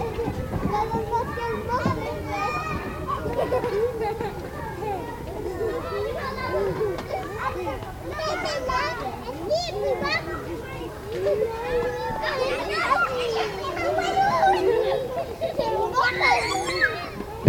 The station school, called like that because it's near the Court-St-Etienne station. Very young children are playing at the yard.